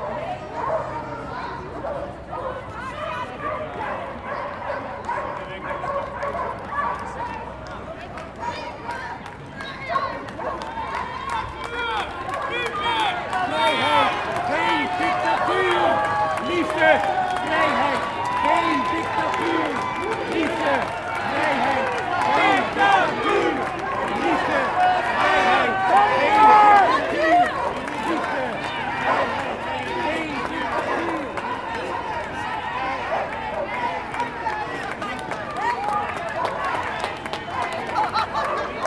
Museumplein, Amsterdam, Nederland - Love, freedom, no dictatorship
Weekly demo against corona measures
Noord-Holland, Nederland